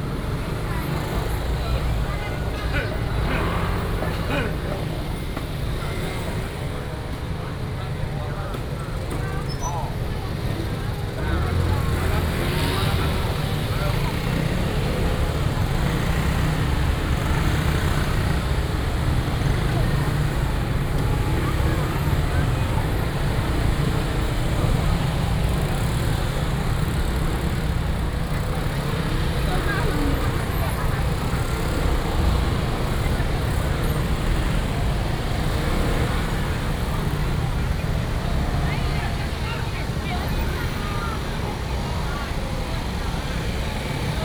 Sec., Jiangning Rd., Banqiao Dist., New Taipei City - Walking through the traditional market
Walking through the traditional market, Cries of street vendors, A large of motorcycles and people are moving in the same street
Banqiao District, New Taipei City, Taiwan, 29 July 2015, ~18:00